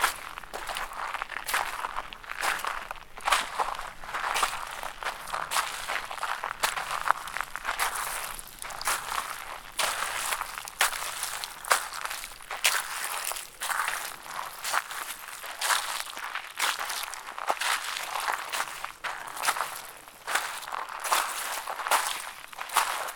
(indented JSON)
{
  "title": "Keeler, CA, USA - Walking in Bacterial Pond on Owens Lake",
  "date": "2022-08-25 11:00:00",
  "description": "Metabolic Studio Sonic Division Archives:\nWalking on edge of bacterial pond on Owens Dry Lake. Recorded with Zoom H4N recorder",
  "latitude": "36.45",
  "longitude": "-117.91",
  "altitude": "1085",
  "timezone": "America/Los_Angeles"
}